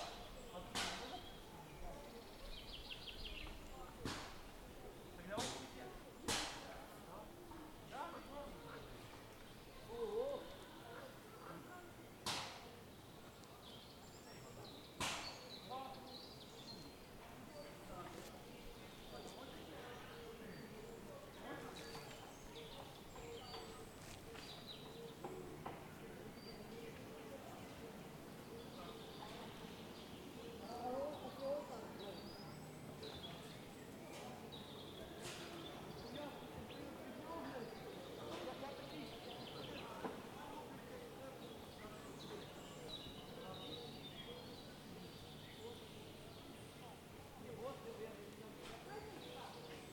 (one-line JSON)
{"title": "провулок Прибузький, Вінниця, Вінницька область, Україна - Alley12,7sound17stonetrailandcyclists", "date": "2020-06-27 14:54:00", "description": "Ukraine / Vinnytsia / project Alley 12,7 / sound #17 / stone, trail and cyclists", "latitude": "49.19", "longitude": "28.46", "altitude": "241", "timezone": "Europe/Kiev"}